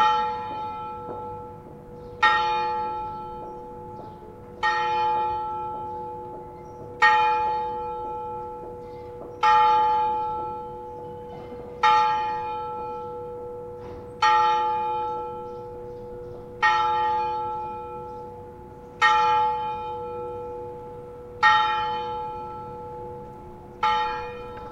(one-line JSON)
{"title": "Nant, France - Nant bells", "date": "2016-05-01 12:00:00", "description": "The Nant bells at 12. It's a beautiful medieval village.", "latitude": "44.02", "longitude": "3.30", "altitude": "500", "timezone": "Europe/Paris"}